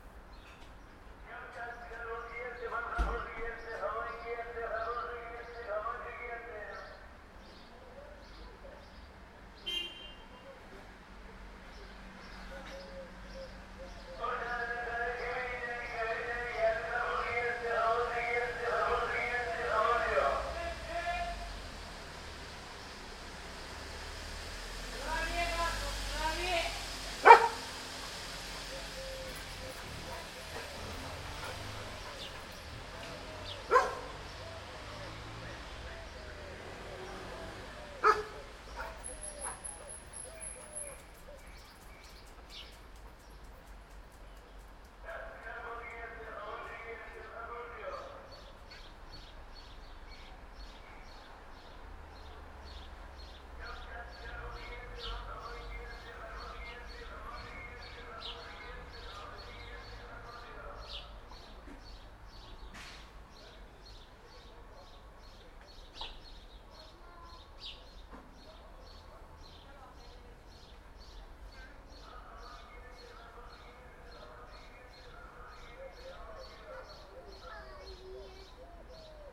Dikkaldırım Mahallesi, Büklüm Cd., Osmangazi/Bursa, Turkey - Watermelon seller
Watermelon seller, dog barking
19 July, 12:26